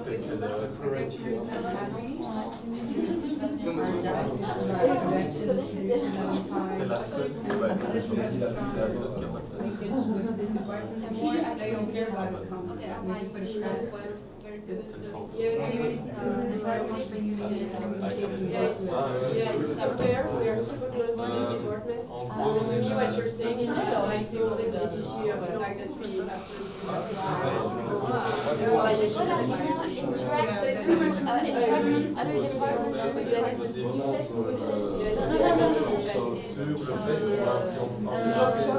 Quartier des Bruyères, Ottignies-Louvain-la-Neuve, Belgique - A course of english
In the Jacques Leclercq classes, a course of english, we are here in a case of practical studies.